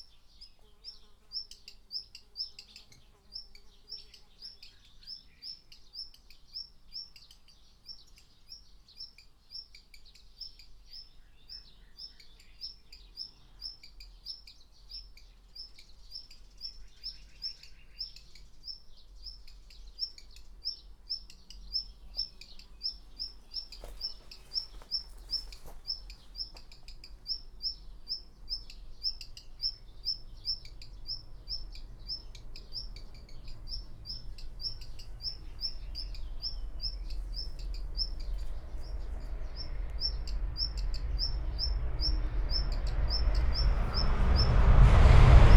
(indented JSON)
{
  "title": "Schönhausen (Elbe), station - walking around",
  "date": "2012-05-19 16:25:00",
  "description": "walking around the abandoned main station of Schönhausen. the station is functional, a regional train stops every 2 hours, but the station building is long closed and in a bad shape. the overall atmosphere ist interesting, high speed ICE trains to and from Berlin passing frequently, in between its quiet and deserted. a black redstart complains about my presence.\n(tech: SD702, DPA4060 binaural)",
  "latitude": "52.59",
  "longitude": "12.04",
  "altitude": "31",
  "timezone": "Europe/Berlin"
}